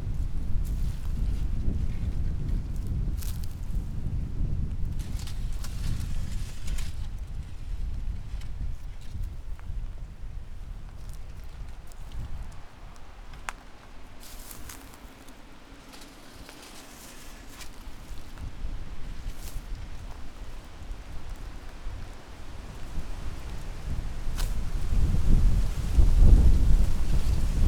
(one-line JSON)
{"title": "levada east from Camacha - wilted branch", "date": "2015-05-06 15:52:00", "description": "a wilted branch floating down the levada dragging garbage. as it passed under a concrete footbridge every few seconds it made a scratching sound.", "latitude": "32.68", "longitude": "-16.84", "altitude": "631", "timezone": "Atlantic/Madeira"}